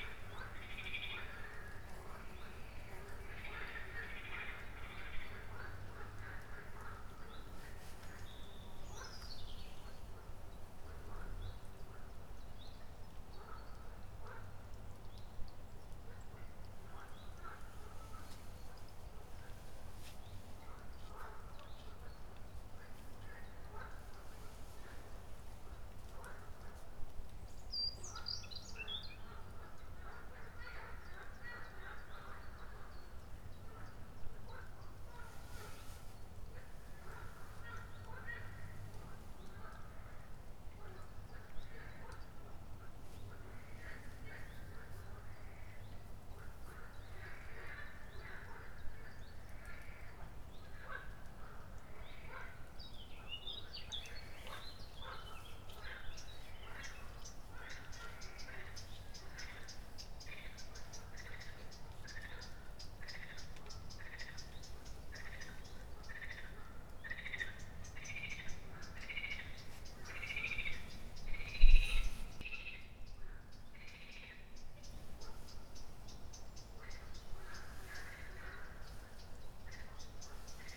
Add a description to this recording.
Afternoon walk in the woods of Zelem on a sunny day in April. You can hear frogs, birds, mosquitos and the wind. Recorded with Zoom H1